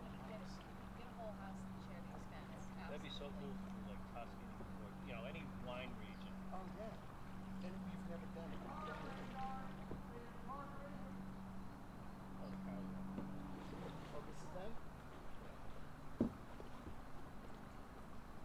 United States, California, Healdsburg, Warnecke Ranch - 3 canoos passing by on the russian river
tascam dr-100